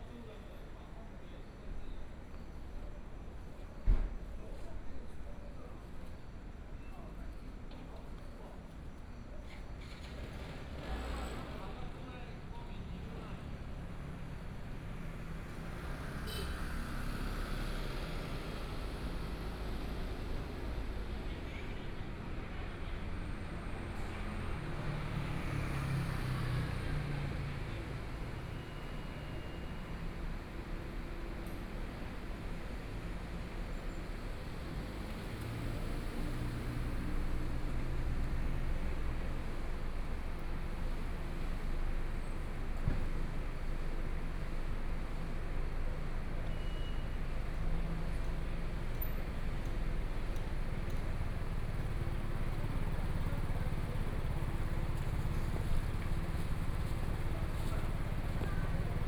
Station entrance, Zoom H4n+ Soundman OKM II